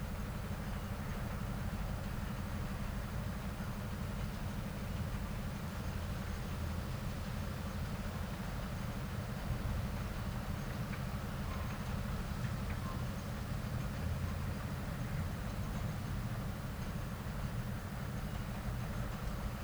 Colchester, Essex, UK - Colchester depot during the day
Military depot in Colchester